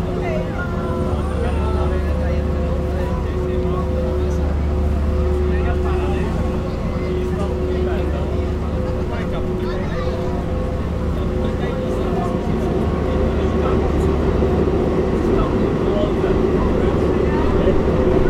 West 45th Street, W 46th St, New York, NY, United States - The Hum, Max Neuhaus’ Times Square Sound Installation
Max Neuhaus’ Times Square sound installation.
Zoom h6
NYC, New York, USA, 28 August, 01:42